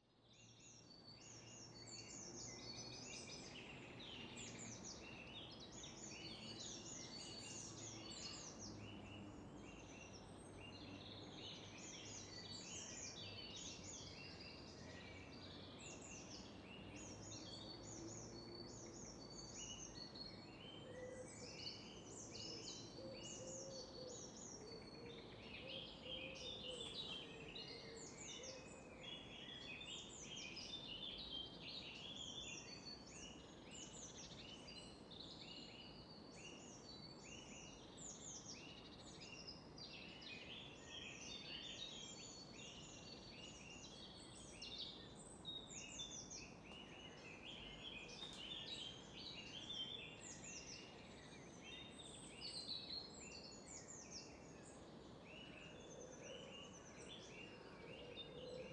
Recorded during the flypath closure week due to the ash cloud
Recorder: Edirol R4 Pro
Microphones: Oktava MK-012 in Bluround® setup
Greater London, UK